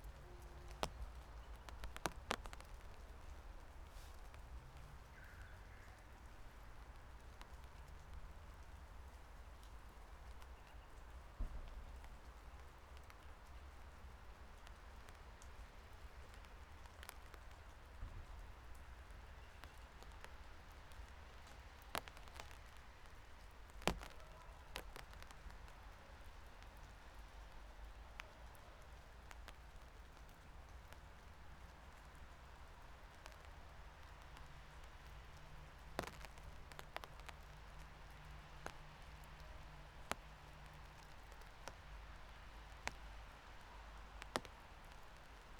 Piatkowo district, path to Marysienki alotments - umbrella at work
rain drops falling from leaves on my umbrella. all kinds of rain sounds. gentle swoosh, small drops, fat drops, trickles, water gurgling in a drain. damp air carries sounds somewhat differently.